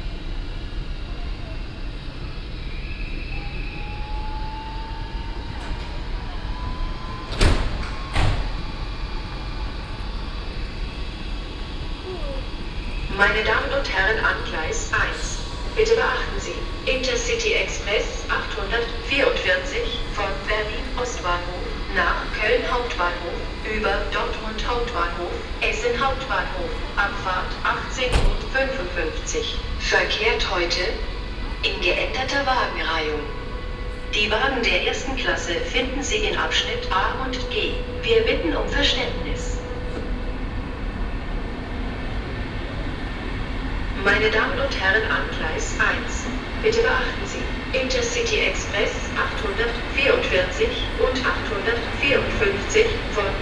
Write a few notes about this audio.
zugeinfahrt, lautsprecheransage, zugabfahrt, abends, soundmap nrw, - social ambiences, topographic field recordings